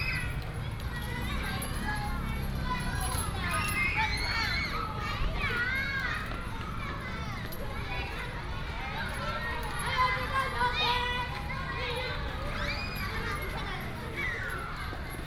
Daming St., West Dist., Taichung City - Class break time
Class break time, Primary school students